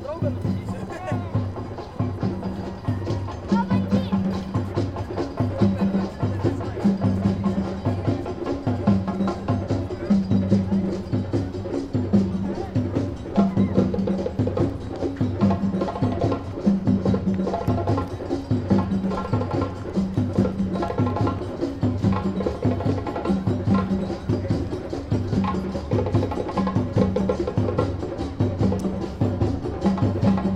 Vilnius, Lithuania, a walk - street music day
Street Music Day - yearly celebration in Lithuania. strange, apocalyptically sounding, mixture of street musicians with cathedral bells